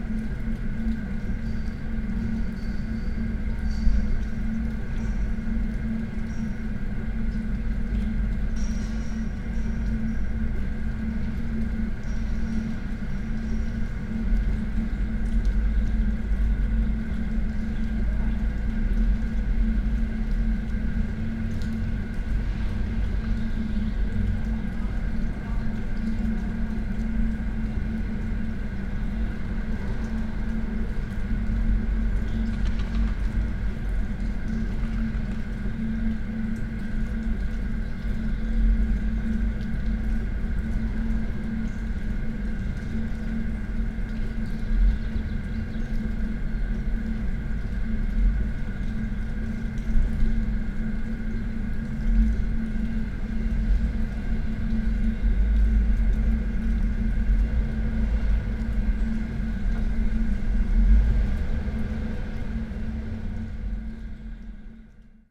from/behind window, Mladinska, Maribor, Slovenia - trumpet, melting snow
trumpet, gas furnace, melting snow, cars
28 February